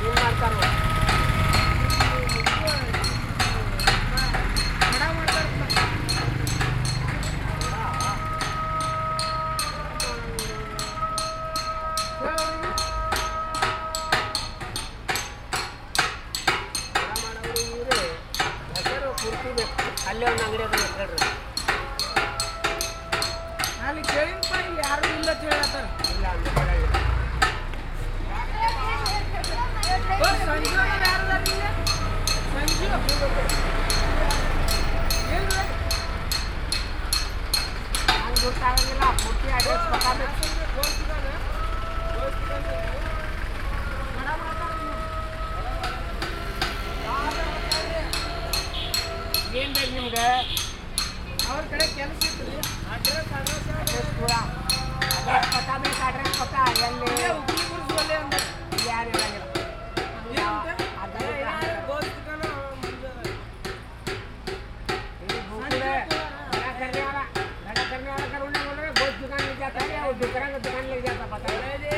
India, Karnataka, Bijapur, Upli Buruz, Jar manufacturing, muezzin
Karnataka, India, 8 March 2011, 18:40